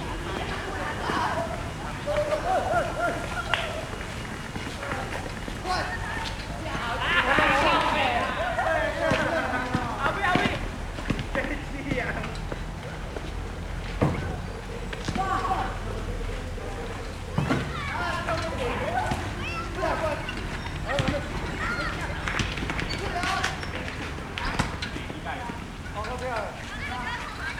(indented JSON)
{
  "title": "Shueilin Township, Yunlin - Elementary School",
  "date": "2012-01-23 16:48:00",
  "latitude": "23.54",
  "longitude": "120.22",
  "altitude": "5",
  "timezone": "Asia/Taipei"
}